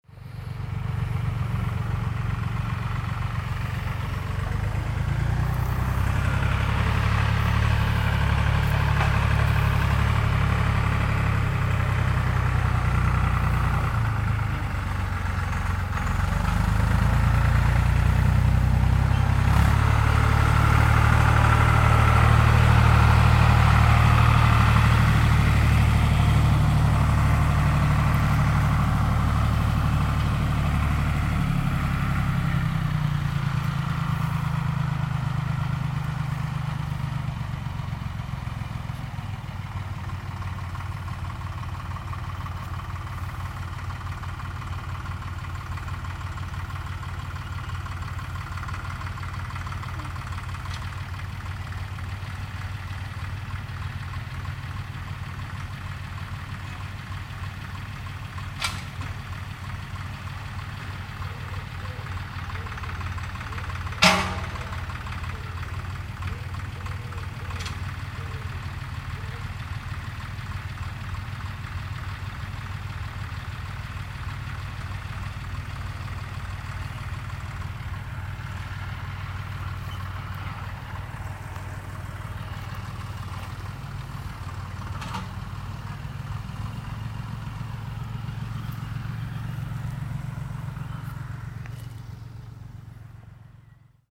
recorded june 30th, 2008.
project: "hasenbrot - a private sound diary"
Ruppichteroth, Germany